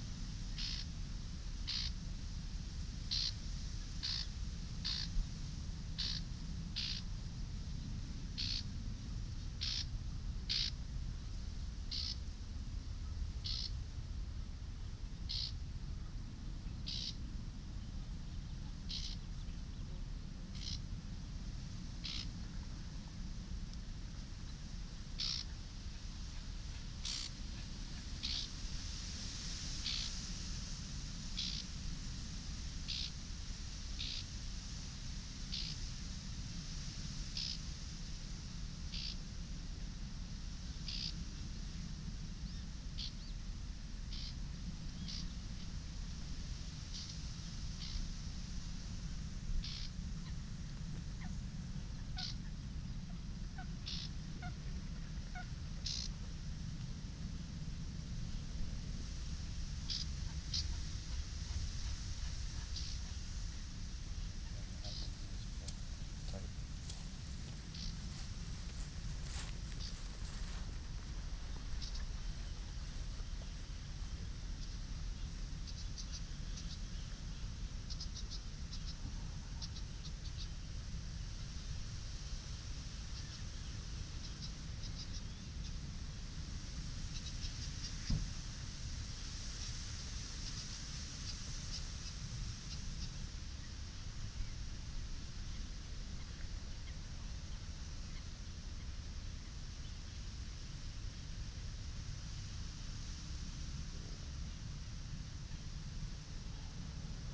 Werkendam, Nederland - Jantjesplaat (De Biesbosch)
Recorded on a windy path among the reeds in National Park De Biesbosch. Check Aporee for the exact location.
Binaural recording.